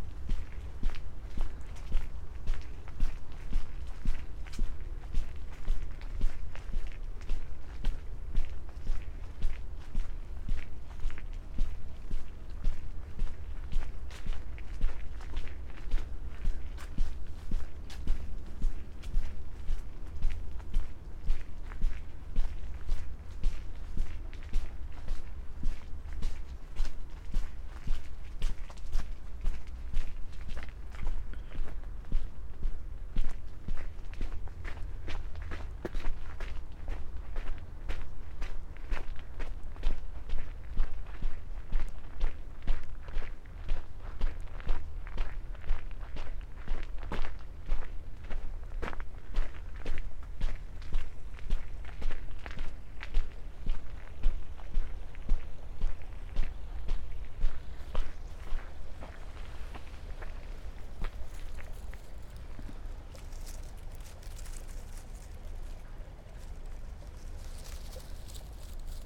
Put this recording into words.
round walk in the city park near midnight with full moon rising, variety of fallen leaves, fluid ambiance with rivulets due to intense rainy day - part 1